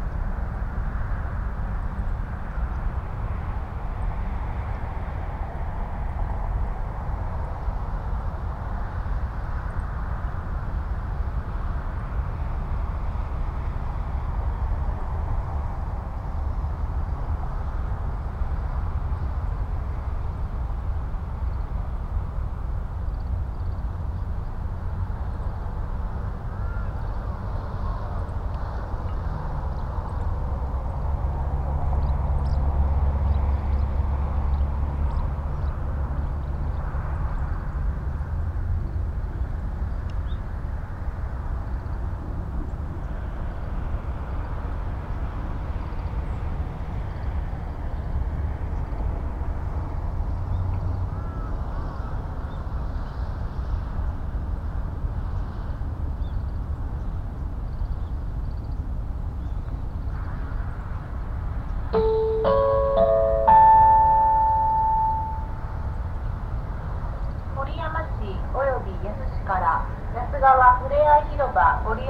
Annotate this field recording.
Traffic rumble, crows, kids playing in the distance, and an hourly public address message that asks people to obey park rules: no golf, RC cars or aircraft, fireworks, unleashed dogs, fires, littering, or other activities that may disturb people. The same recorded message dominates the sonic environment of the park (Japanese name: 野洲川立入河川公園).